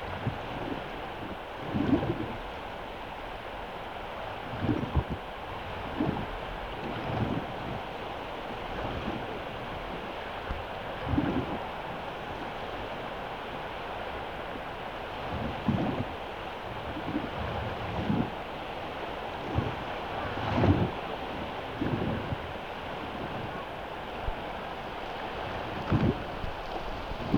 Jūrmala, Latvia, on the shore
hydrophones buried in the sand on the seachore, near the water
22 August 2015, 10:00